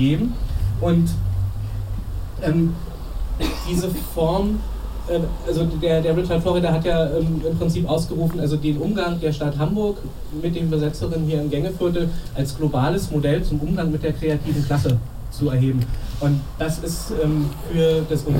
Dr. Andrej Holm. In welcher Stadt wollen wir leben? 17.11.2009. - Gängeviertel Diskussionsreihe. Teil 2
Mit der Besetzung des Gängeviertels entstand über Nacht eine selbstorganisierte „Traumstadt“ - mitten in Hamburg. Diese steht der Stadt des Ausschlusses und der Verdrängung, der Stadt des Höchstbieterverfahrens und der Stadt der Tiefgaragen, der Stadt des Marketings und der Stadt der Eventkultur entgegen. Das wirft die Frage auf, wie denn die Stadt eigentlich aussieht, in der wir alle leben wollen.
Darüber möchte die Initative „Komm in die Gänge“ eine lebhafte Diskussion in der Hamburger Stadtbevölkerung anregen, denn u.a. mit der Besetzung des Gängeviertels wurde die Frage zwar endlich auf die stadtpolitische Tagesordnung gesetzt, aber entsprechend unserer Forderung nach „Recht auf Stadt“ für alle, soll die Diskussion darüber vor allem von den StadtbewohnerInnen selber getragen werden.
Hamburg, Germany, 18 November 2009, 12:41